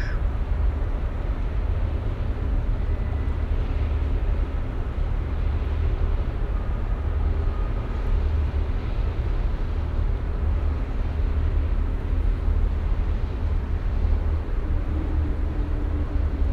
Bosporus soundscape from Istanbul Modern balcony
ship, ferry and air traffic on the Bosporus